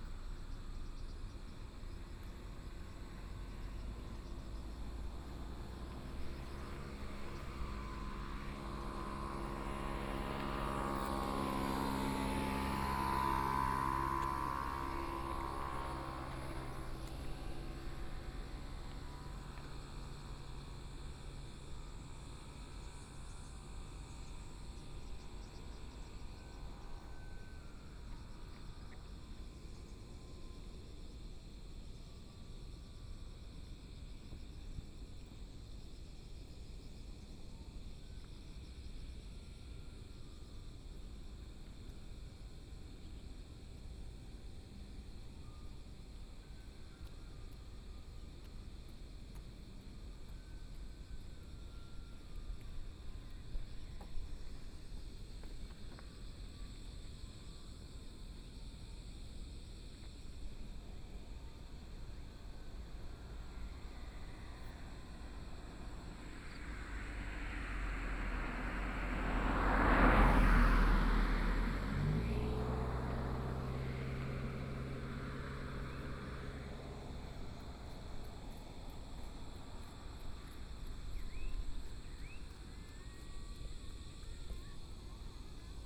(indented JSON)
{
  "title": "五福橋, 五結鄉利澤村 - Night of farmland",
  "date": "2014-07-28 18:59:00",
  "description": "Night of farmland, Small village, Traffic Sound, Birdsong sound",
  "latitude": "24.66",
  "longitude": "121.82",
  "altitude": "4",
  "timezone": "Asia/Taipei"
}